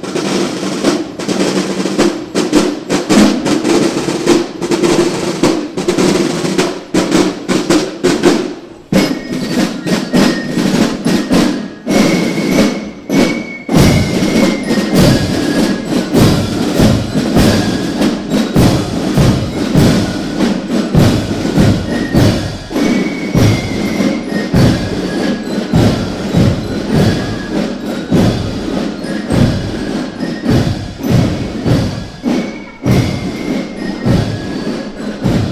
Cologne, Nippes, Parade of Marching Bands of Gun Cubs
A surreal scenario unfolded under my window when I came back from Paris: a parade of marching bands of local gun clubs paraded through the streets (a funny contrast to the french experience giving the impression that, while French ALWAYS sit in bars et dans les Brasseries or make love during the day, Germans put on anachronsitic uniforms and march to military music) - without any audience aside the road! The groups nevertheless marched strictly in order, carrying their flags, wearing their uniforms and medals as if it was of a real purpose or importance.